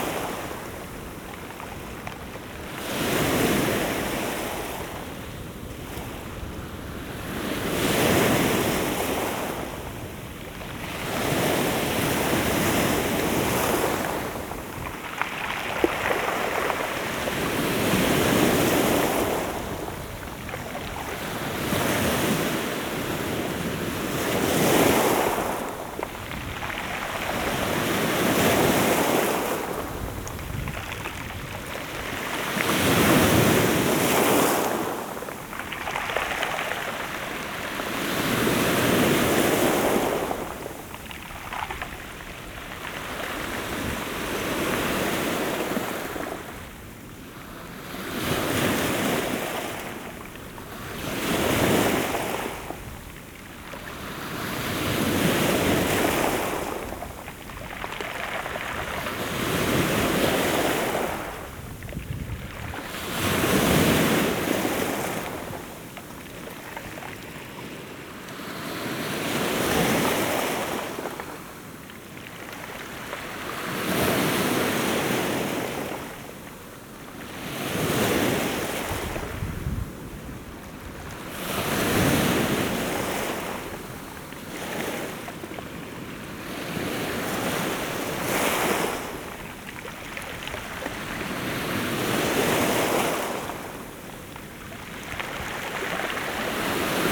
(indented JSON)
{
  "title": "Pihla, Panga, Saaremaa, Estonia - waves on the rocks",
  "date": "2022-07-29 11:34:00",
  "description": "Small waves glide over a rocky beach.",
  "latitude": "58.55",
  "longitude": "22.29",
  "altitude": "10",
  "timezone": "Europe/Tallinn"
}